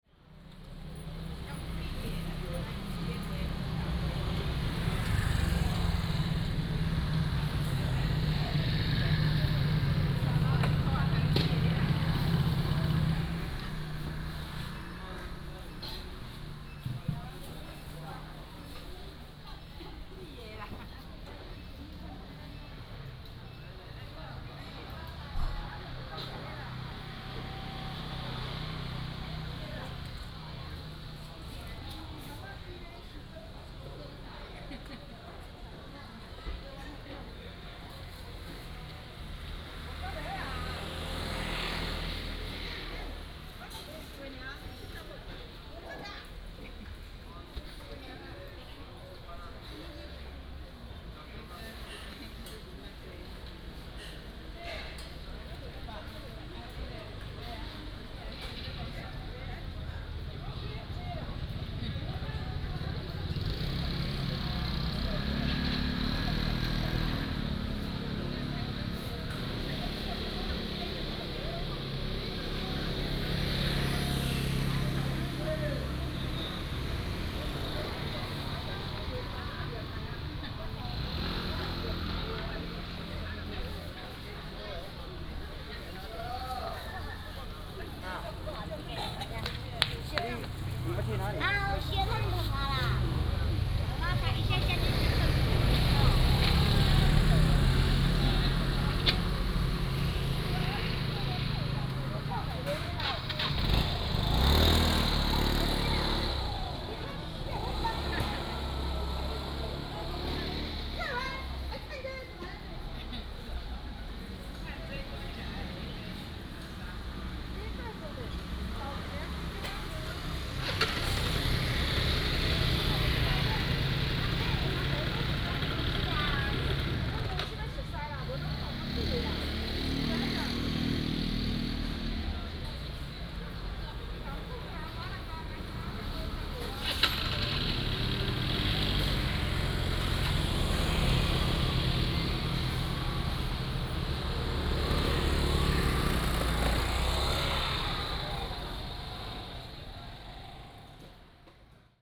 Zhongshan Rd., Hsiao Liouciou Island - Traditional Market
Traditional Market, Traffic Sound
Liuqiu Township, Pingtung County, Taiwan, 2 November 2014, 09:24